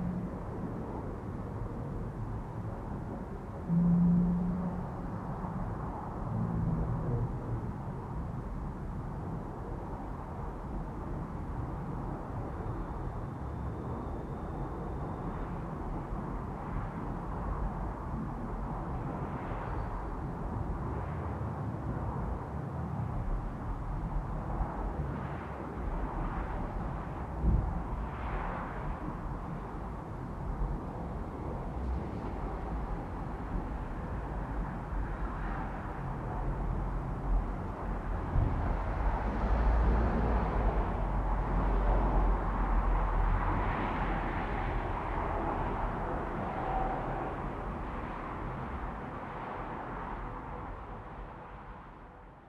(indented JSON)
{
  "title": "Herentalsebaan, Wommelgem, Belgium - Plane liftoff distance",
  "date": "2018-04-21 22:13:00",
  "description": "recorded and created by Kevin Fret\nwith zoom H4N and a pair of AKG C1000S XY pattern 120° trough",
  "latitude": "51.19",
  "longitude": "4.54",
  "altitude": "10",
  "timezone": "Europe/Brussels"
}